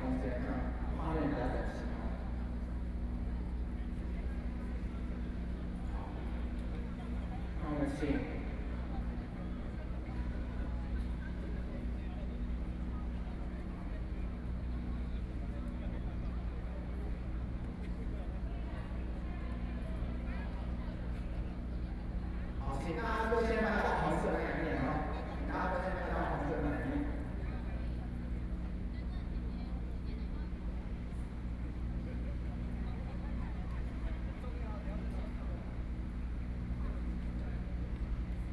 Taipei, Taiwan - Protest against nuclear power
Protest against nuclear power, Zoom H4n+ Soundman OKM II
26 May 2013, ~15:00